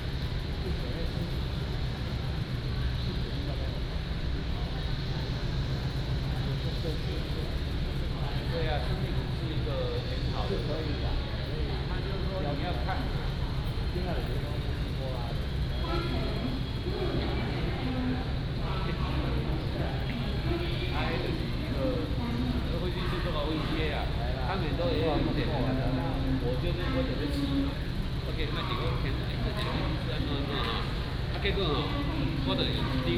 Beigan Airport, Lienchiang County - In the airport lobby
In the airport lobby